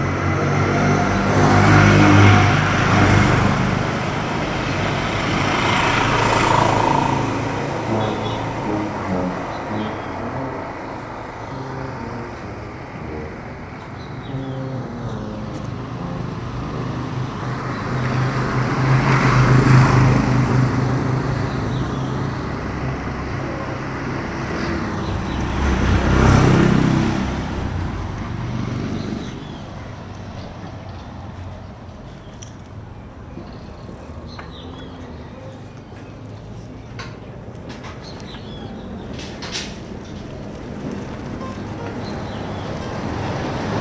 Un trombonista de la banda del pueblo ensaya en la puerta de su casa.
30 April 2022, 15:29